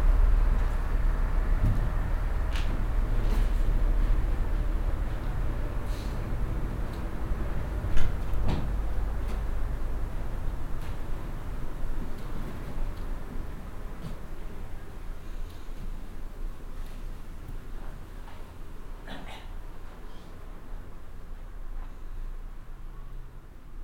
lech, arlberg, at church entrance
At the main entrance of the church, some people leaving and entering the church. The sound of the queeky wooden door and the ski shoes and ski sticks that the people wear.
international soundscapes - topographic field recordings and social ambiences
Lech am Arlberg, Austria, 6 June 2011